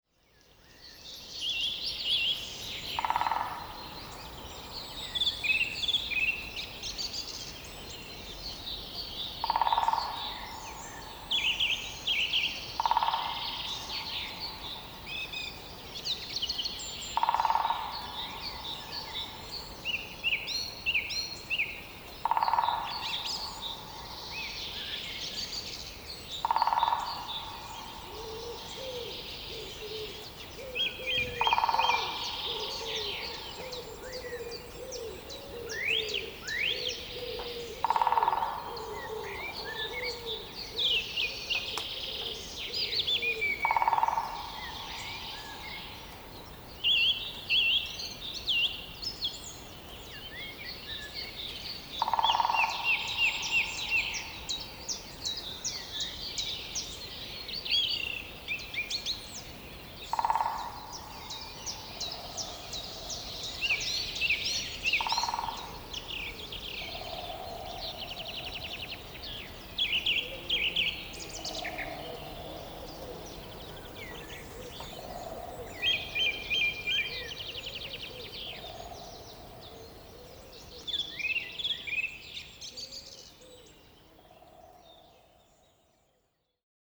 Rusava, Rusava, Czechia - Dawn Chorus from Rusava
A quiet dawn chorus from lockdown. I have recorded it in a Wallachian village Rusava. You can hear a woodpecker's drumming, song thrush and many others.